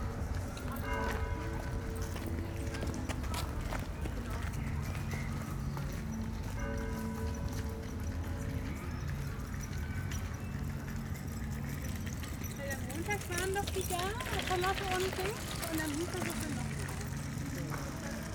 bells from two churches at reuterplatz, early summer evening.